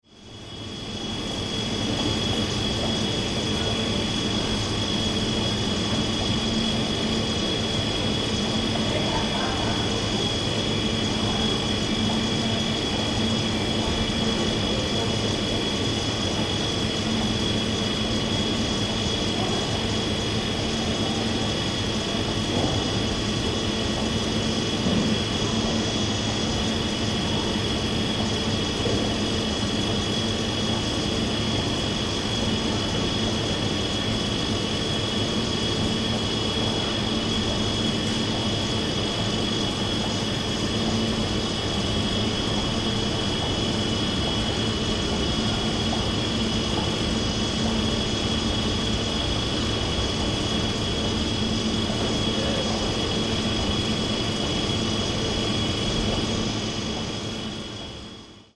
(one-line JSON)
{"title": "berlin, hermannplatz: warenhaus, kühlung - the city, the country & me: refrigeration & moving staircase at karstadt department store", "date": "2008-05-30 10:00:00", "description": "the city, the country & me: may 13, 2008", "latitude": "52.49", "longitude": "13.42", "altitude": "43", "timezone": "Europe/Berlin"}